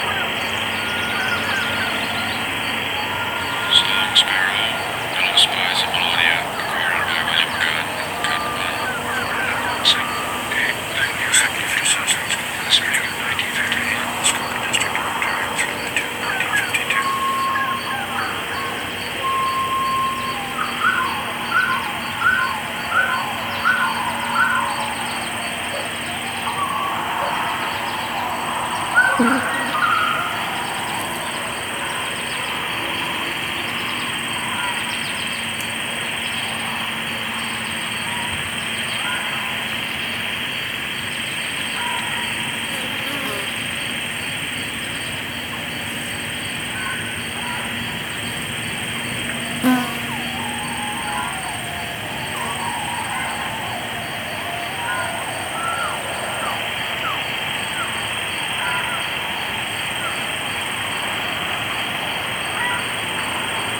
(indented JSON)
{
  "title": "The Funny Farm, Meaford, ON, Canada - Octet - outdoor sound installation",
  "date": "2016-08-26 15:00:00",
  "description": "Eight SM58 microphones in a tree, wired as tiny loudspeakers. Sound materials are birdsongs recorded in Ontario in 1951-52 by William WH Gunn. Zoom H2n with post EQ + volume tweaks.",
  "latitude": "44.54",
  "longitude": "-80.65",
  "altitude": "298",
  "timezone": "America/Toronto"
}